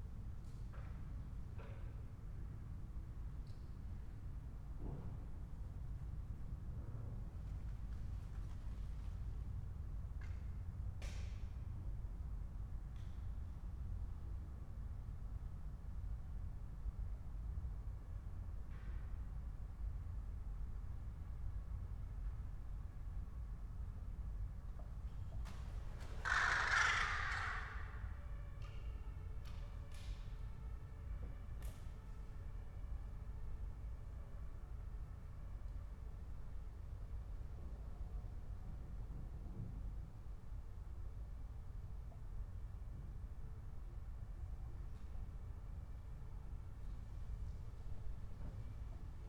a swarm of birds in the trees of the backyard, raindrops
the city, the country & me: october 24, 2014
99 facets of rain
Berlin, Germany